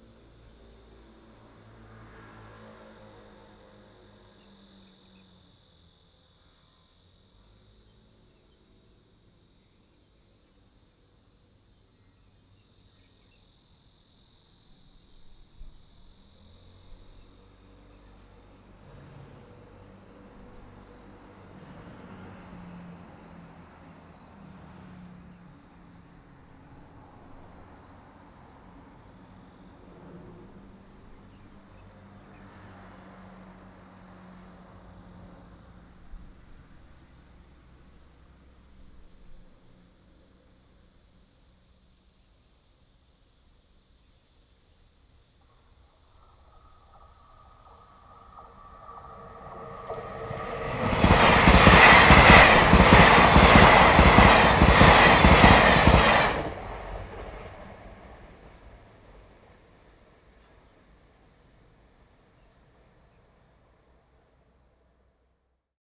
Close to the rails, train runs through, Traffic sound
Zoom H6
Yangmei District, Taoyuan City, Taiwan